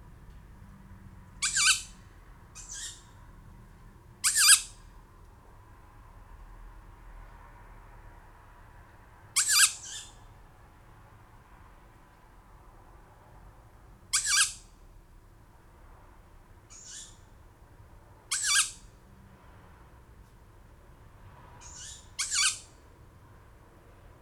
Off Main Street, Helperthorpe, Malton, UK - tawny owl fledglings ...
tawny owl fledglings ... two birds ... dpa 4060s in parabolic to SD 702 ...
England, United Kingdom, 20 July 2012, ~23:00